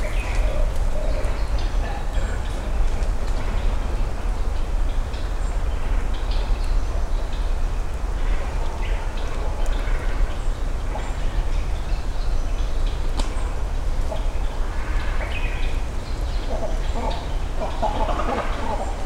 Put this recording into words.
Great Cormorants (カワウ) nesting high in trees along Yasugawa (river) in Rittō City, Shiga Prefecture, Japan. We can also hear great egrets nesting nearby, as well other birds and some human activity. This recording was made with a Sony PCM-M10 recorder and a pair of small omnidirectional mics tied to a tree. Post-processing with Audacity on Fedora Linux included only trimming and fade-in/fade-out (no compression or EQ).